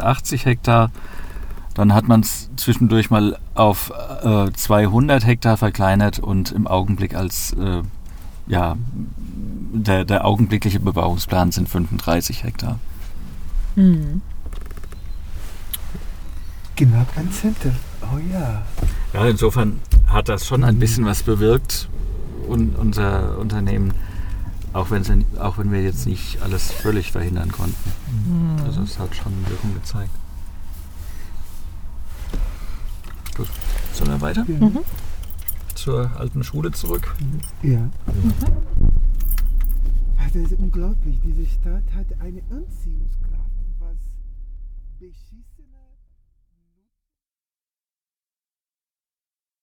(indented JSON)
{
  "title": "Weetfeld, Hamm, Germany - Closing the round...",
  "date": "2014-11-28 17:56:00",
  "description": "Weetfelder Strasse near the border of the former development plans… currently, it's the archaeologists who are digging here...\nAn der Weetfelder Strasse, nahe der Grenze des ehemaligen Suchraumes…\n“Citizen Association Against the Destruction of the Weetfeld Environment”\n(Bürgergemeinschaft gegen die Zerstörung der Weetfelder Landschaft)",
  "latitude": "51.63",
  "longitude": "7.79",
  "altitude": "72",
  "timezone": "Europe/Berlin"
}